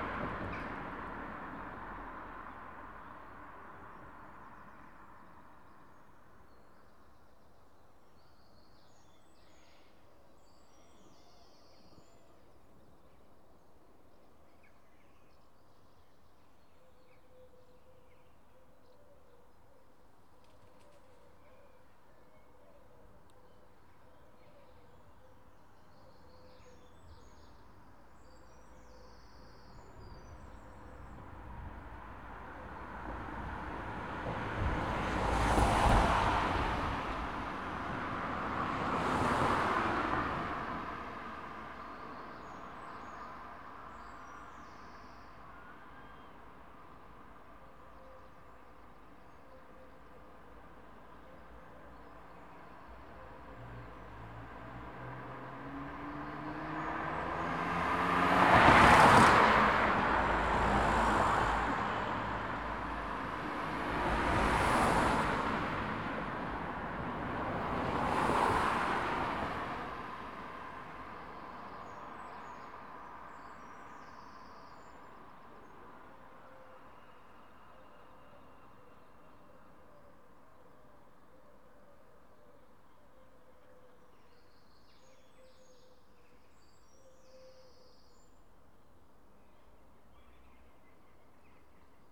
London Rd, Dorchester, UK - Roadside sounds on a Sunday morning
Sitting on the bench by the bridge on London Road, Dorchester, at about 6.25 am.
22 June 2017, 06:25